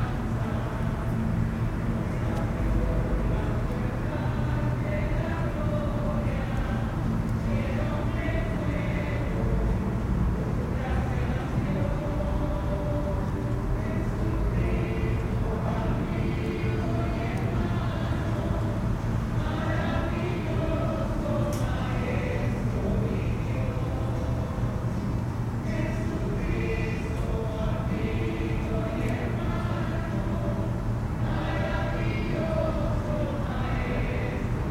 church singers rehearsing, Houston, Texas - church singers
*Binaural* Heard this group practicing from outside a classroom just after voting. I don't speak spanish, and don't recognize the hymn, but will say that hearing/seeing this group in this candid way calmed me right down after waiting in line for three hours to vote with too many other people.
Church Audio CA14>Tascam DR100 MK2